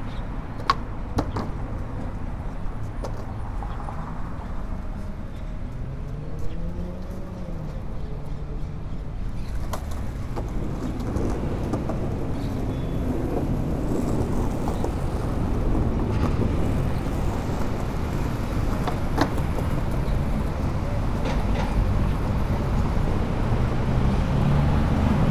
Blvd. López Mateos Pte., Obregon, León, Gto., Mexico - Desde el puente del Parque Hidalgo, arriba del bulevar.
From the Parque Hidalgo bridge, above the boulevard.
Traffic coming and going on the boulevard and some people going over the bridge, among them, some with skateboards.
I made this recording on november 29th, 2021, at 1:04 p.m.
I used a Tascam DR-05X with its built-in microphones and a Tascam WS-11 windshield.
Original Recording:
Type: Stereo
El tráfico que va y viene en el bulevar y algunas personas pasando por el puente, entre ellos, algunos con patinetas.
Esta grabación la hice el 29 de noviembre de 2021 a las 13:04 horas.
Guanajuato, México, 29 November 2021